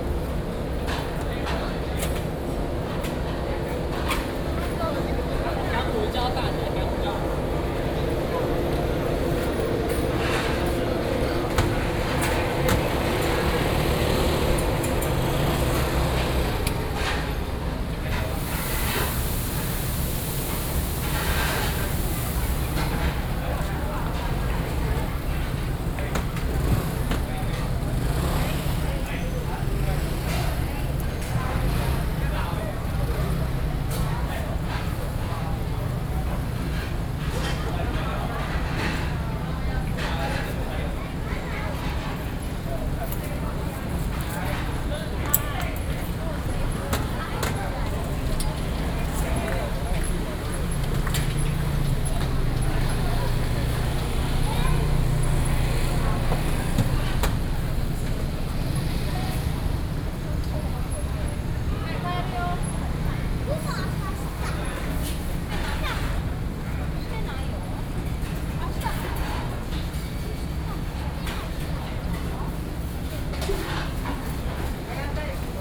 Gongguan - Next to the restaurant
Street corner, In front of the Restaurant
Sony PCM D50 + Soundman OKM II
Daan District, Taipei City, Taiwan, 9 June, ~19:00